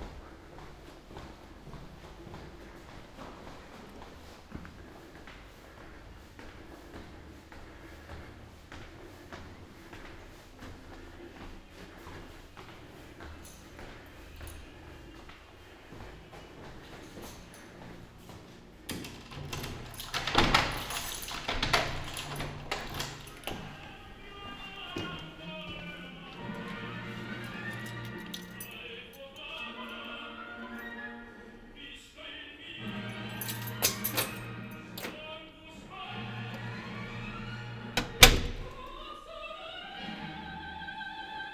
Ascolto il tuo cuore, città, Chapter LXXVIII - “Walk to outdoor market on Saturday one year later in the time of covid19” Soundwalk
“Walk to outdoor market on Saturday one year later in the time of covid19” Soundwalk
Chapter CLXXII of Ascolto il tuo cuore, città. I listen to your heart, city.
Saturday, May 15th, 2021. Walk in the open-door square market at Piazza Madama Cristina, district of San Salvario, Turin, one year and two months days after emergency disposition due to the epidemic of COVID19.
Start at 11:57 a.m., end at h. 00:15 p.m. duration of recording 18’16”
As binaural recording is suggested headphones listening.
The entire path is associated with a synchronized GPS track recorded in the (kml, gpx, kmz) files downloadable here:
go to similar soundwalk, one year before: 78-Walk to outdoor market on Saturday
Provincia di Torino, Piemonte, Italia, 15 May